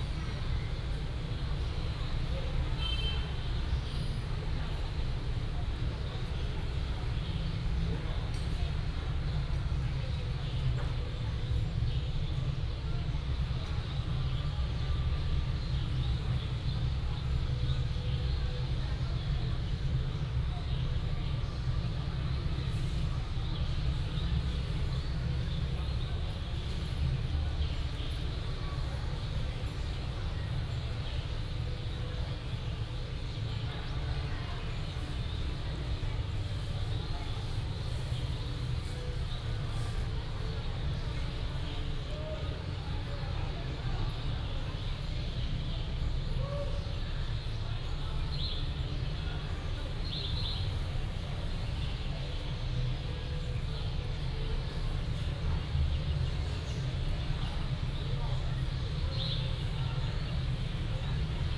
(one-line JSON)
{"title": "福德祠, Fengyuan District - In front of the temple", "date": "2017-01-22 11:59:00", "description": "In front of the temple, Bird calls, Market cries", "latitude": "24.25", "longitude": "120.72", "altitude": "222", "timezone": "GMT+1"}